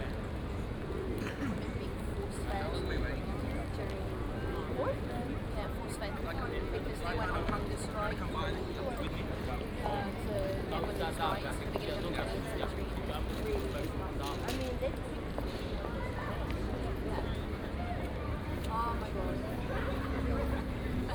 having a rest at Bonn Square, Oxford. quite some people had the same idea too. deep hum of a bus waiting nearby.
(Sony PCM D50, OKM2)
Oxford, UK, 14 March 2014, 14:30